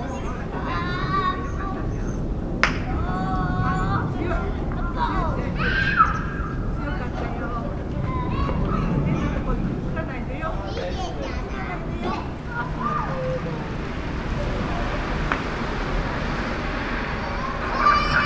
Japan Präfektur ChibaMatsudoShinmatsudo, ７丁目 - Children play in suburban park in Tokyo(Chiba
you can hear the children play in the park and adults playing something like crocket (similar to the actual photo; the persons on the open space in the park; this is where you can hear the crocket sound from);
it was recorded from my balcony at the second floor with a Sony D50;
one problem in this recording is the reflected sound from the next building that is about 3-4m apart and maybe the other building on the left side that is farther away; that is why there is some hall in the sound;
千葉県 (Chiba), 日本, 11 February 2013, 13:22